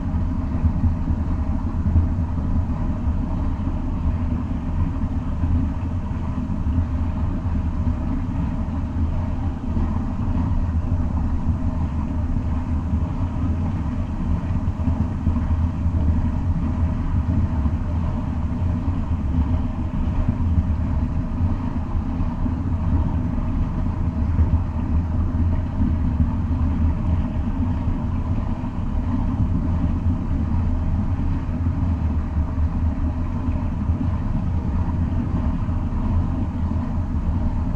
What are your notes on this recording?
water frowing out pf the dam. mics at pipe's mouth